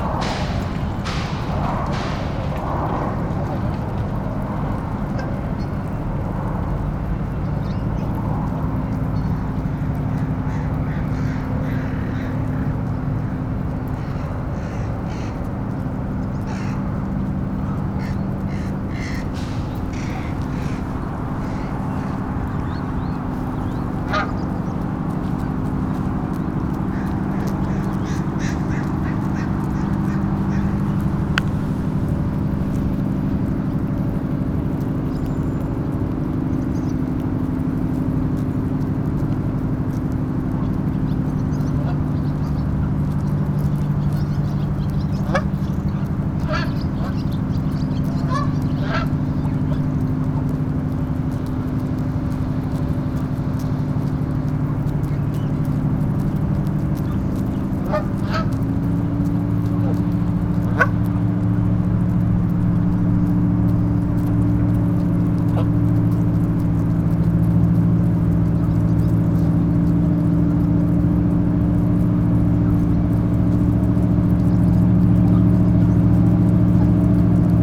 Geese at Gas Works, Wallingford, Seattle, WA, USA - Geese eating grass
About fourty or so Canada Geese pulling up young shoots of grass as they slowly make their way toward me. Boats motoring past, air traffic, a cyclist, crows and various unidentified birds.
Sony PCM D50